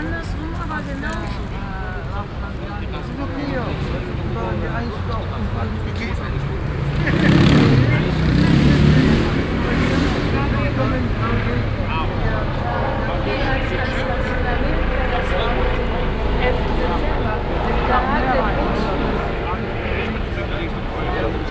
cologne, ebertplatz, afternoon conversations
soundmap nrw: social ambiences/ listen to the people - in & outdoor nearfield recordings
Cologne, Germany, 28 May 2009, 11:40